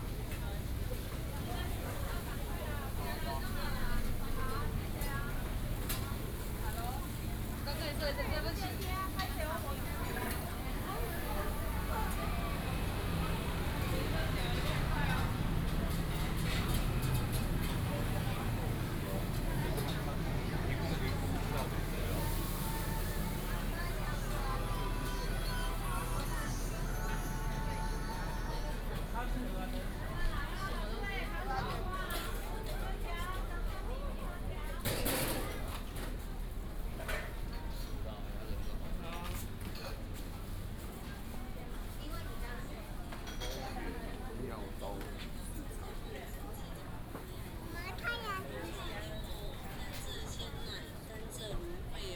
廟東夜市, Fengyuan Dist., Taichung City - food court
food court, Alleyways
Taichung City, Taiwan, 22 January 2017